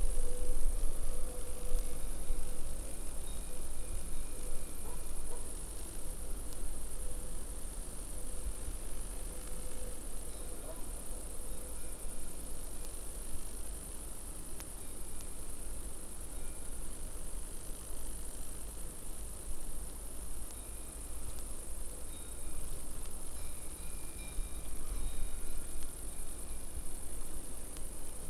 high-tension lines and cows - KODAMA document
Recording made by Hitoshi Kojo during KODAMA residency at La Pommerie